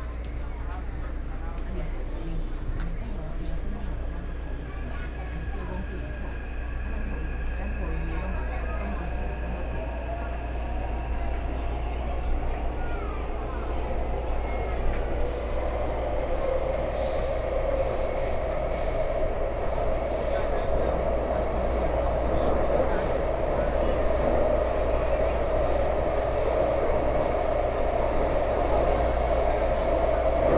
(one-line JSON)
{"title": "Zhongzheng Dist., Taipei City - Xiaonanmen Line (Taipei Metro)", "date": "2013-10-19 16:38:00", "description": "from Chiang Kai-shek Memorial Hall Station to Ximen Station, Binaural recordings, Sony PCM D50 + Soundman OKM II", "latitude": "25.04", "longitude": "121.51", "altitude": "17", "timezone": "Asia/Taipei"}